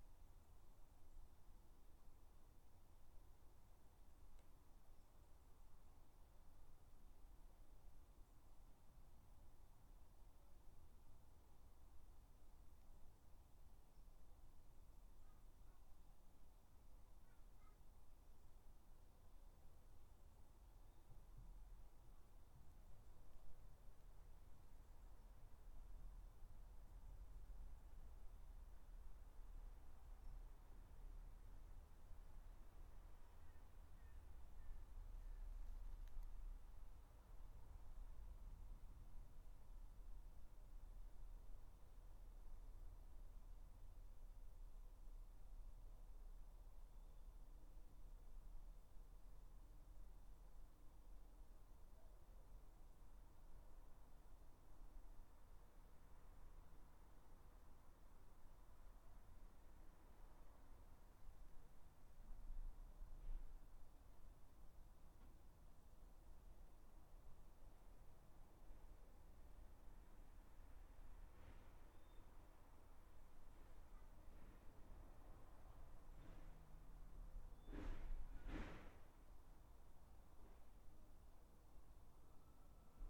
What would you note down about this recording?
3 minute recording of my back garden recorded on a Yamaha Pocketrak